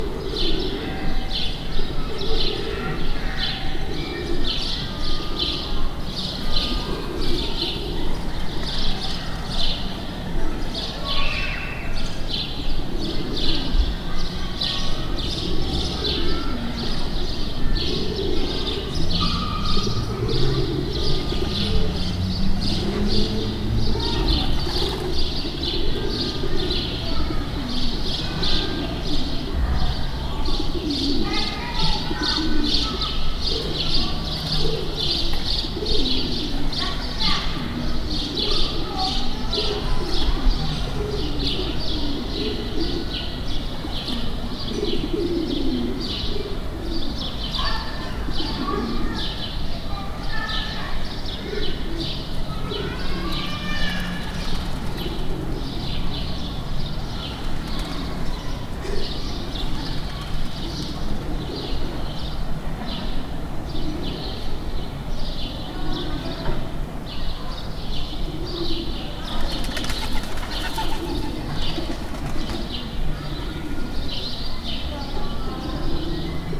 Äußere Brucker Str., Erlangen, Germany - Outside my window
Outside the window of my room. Zoom H1. Birds, church bell, etc.
Bayern, Deutschland, 18 June 2021